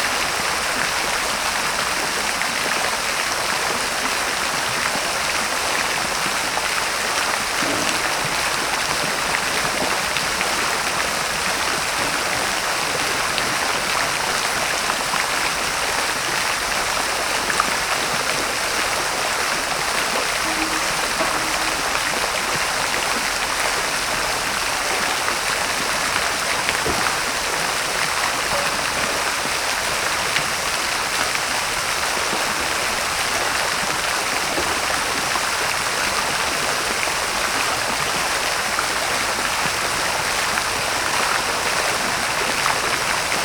Śródmieście Północne, Warszawa - Fontanna Museum Techniki
Fontanna Museum Techniki w Palac Kultury i Nauki, Warszawa
Warsaw, Poland